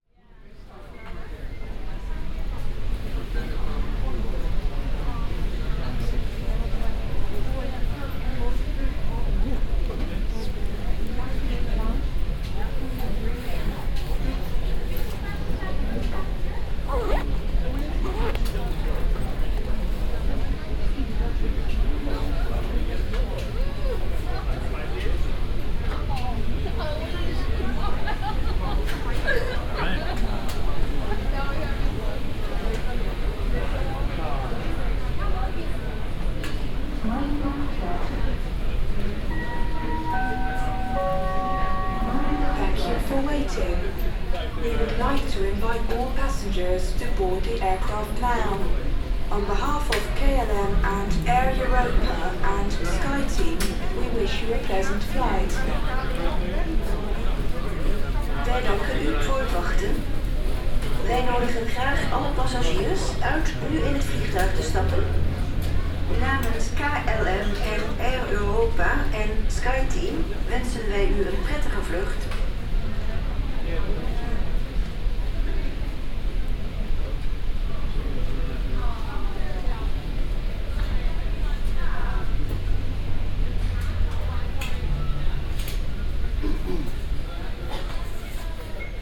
Airport announcements.
Recorded with Soundman OKM + Sony D100
Haarlemmermeer, Noord-Holland, Nederland, 18 September, ~18:00